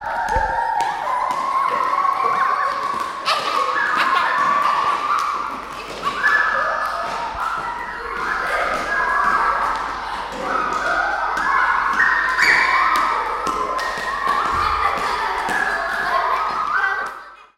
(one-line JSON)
{"title": "Oxford, Oxfordshire, UK - 'Echo!'", "date": "2012-06-19 14:17:00", "description": "Part of the 'Secret Sound' project for schools. A Year 2 class from St Barnabas Primary were taught how to use Zoom H1 recorders and sent about their school to record the ambience of locations around it. This is one of several recordings they made. The best part was the enjoyment the pupils took from activating the space or object they found.", "latitude": "51.76", "longitude": "-1.27", "altitude": "61", "timezone": "Europe/London"}